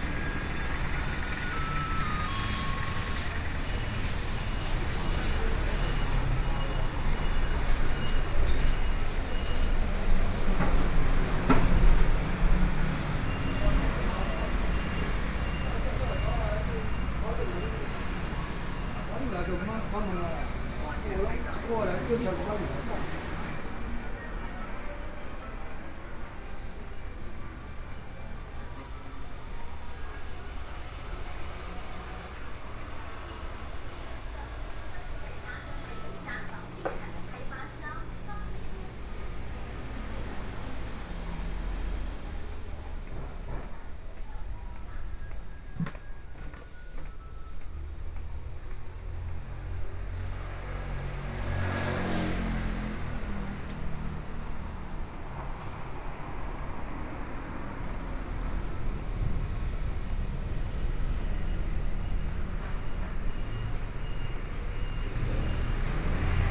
Changhua City, Taiwan - walk in the Street
walking in the Street, Convenience store, Checkout, Traffic Noise, Zoom H4n+ Soundman OKM II
Changhua County, Taiwan, 8 October, ~15:00